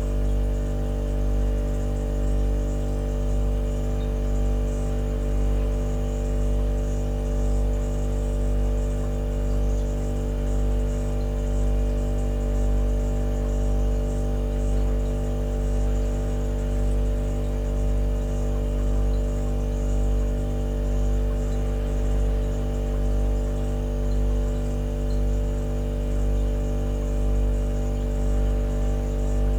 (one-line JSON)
{"title": "vacuum cleaner, loft - Köln, refrigerator", "latitude": "50.92", "longitude": "6.95", "altitude": "55", "timezone": "GMT+1"}